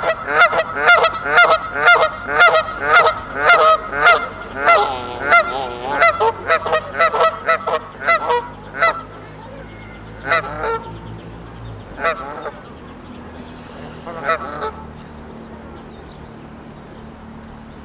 Arrington Lagoon at Dawes Park, Sheridan Rd & Church St, Evanston, IL, USA - lakefrontlurking-ducks
recording ducks and geese at the lagoon